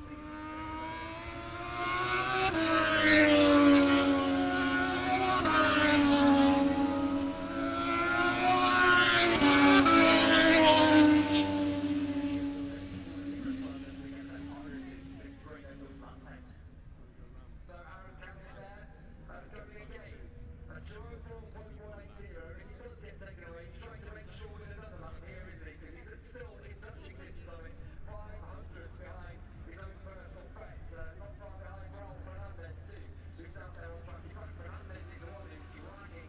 moto two free practice three ... copse corner ... dpa 4060s to MixPre3 ...
28 August 2021, 10:55